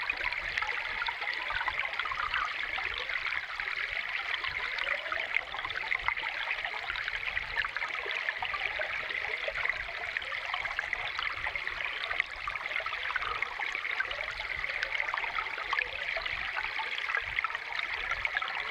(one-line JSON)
{"title": "1745 N Spring Street #4 - Water Spring on Owens Lake", "date": "2022-08-24 12:00:00", "description": "Metabolic Studio Sonic Division Archives:\nWater spring on Owens Dry Lake. Recorded with H4N stereo microphones and 1 underwater microphone", "latitude": "36.48", "longitude": "-118.03", "altitude": "1090", "timezone": "America/Los_Angeles"}